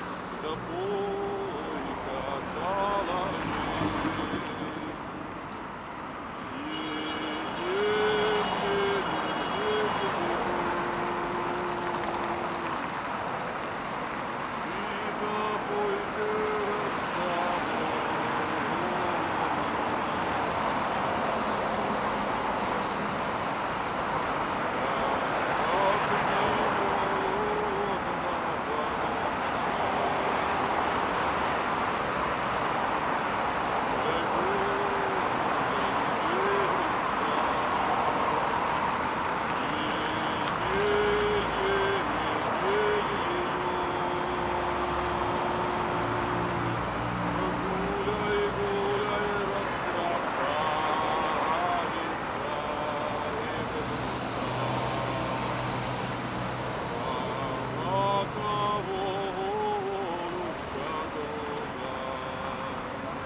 Невский пр., Санкт-Петербург, Россия - Nevsky Prospect
Nevsky Prospect, December 20, recorder - zoom f4, by M.Podnebesnova